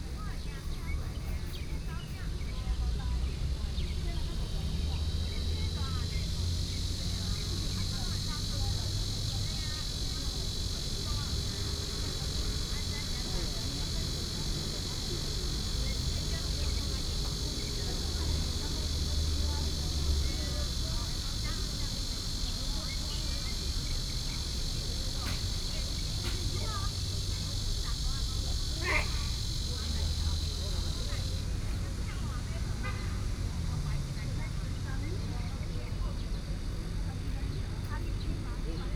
Zhongshan Park, Yilan City - In the Park
In the Park, Cicadas, Traffic Sound, Hot weather
Sony PCM D50+ Soundman OKM II
Yilan City, Yilan County, Taiwan, 5 July 2014